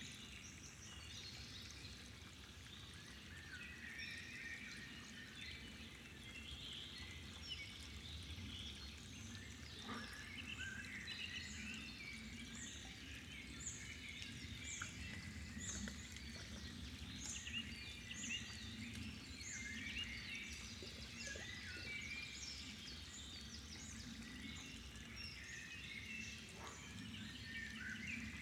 Forest Lake''Höllsee''Nature Park Haßberge Germany - Forest lake on a summer evening
Deep in the Forest you will find this wonderful place. Surrounded by moss-covered trees, swampy wet meadows and numerous smaller watercourses and streams which flow from the higher areas into this forest lake. This nature reserve offers the vital habitat for many plants and creatures here in this area. The ''Höllsee ''As the locals call it, is an important breeding ground for some endangered animal species.
Setup:
EarSight mic's stereo pair from Immersive Soundscapes